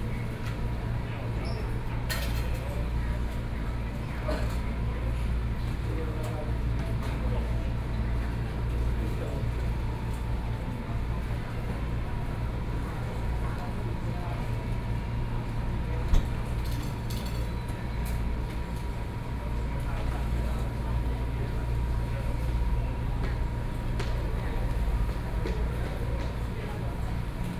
in the Station hall, Sony PCM d50+ Soundman OKM II
Zhongli City, Taoyuan County, Taiwan, 16 September